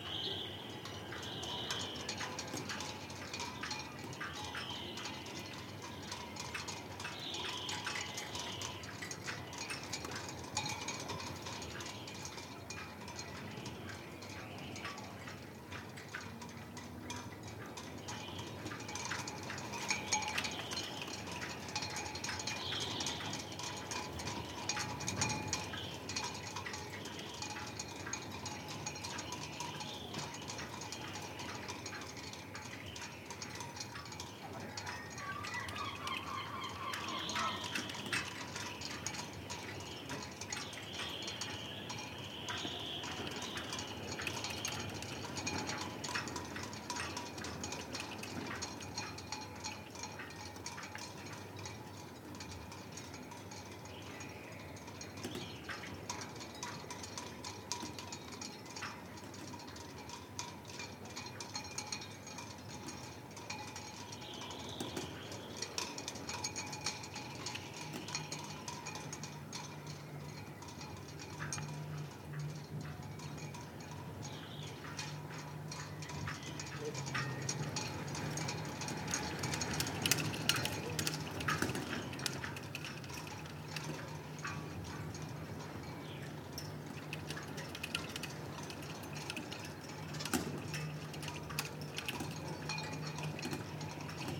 Rye Harbour, Icklesham, East Sussex, UK - Masts and sail cables blowing in the wind
This is the sound of sail cables clanging against masts at Rye Harbour. It was one of those super cold but bright, brisk days and the wind was up. You can hear little devices on the masts - clips and d-hooks etc. - being battered about, and some stuff on the floor being moved by the wind. It took me a while to find a nook where the wind wasn't going directly through my windshield and onto the mics but eventually I found a little place where I could lean in and somehow shelter the EDIROL R-09 from the worst of the gusts. It's still a windy recording, but then it was a windy day. I could have stood and listened for hours.
1 February 2015